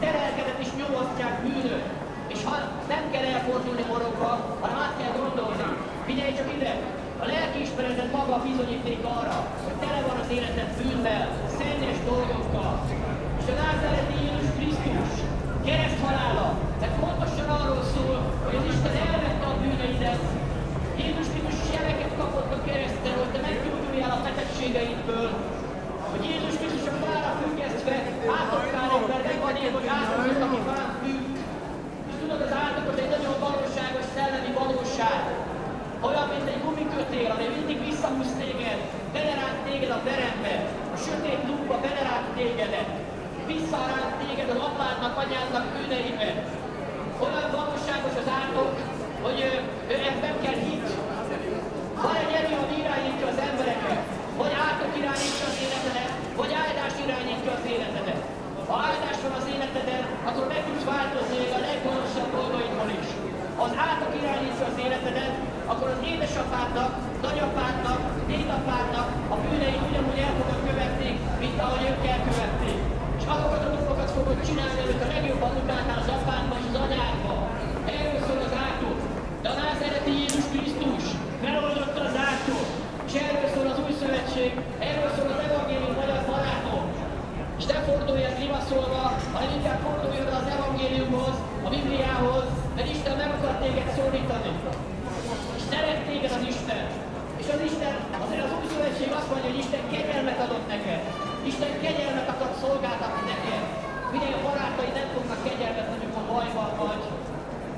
Nyugati téri aluljáró, Budapest, Ungarn - Hallelujah
Strange kind of speakers' corner in the metro underpass
Budapest, Hungary, 25 January 2014, 18:40